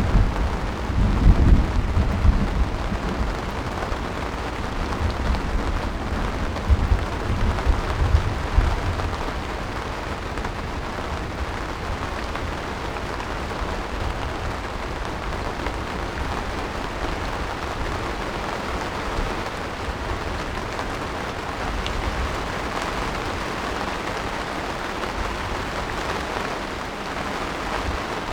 Chapel Fields, Helperthorpe, Malton, UK - inside poly tunnel ... outside thunderstorm ...
inside poly tunnel ... outside thunderstorm ... mics through pre amp in SASS ... background noise ...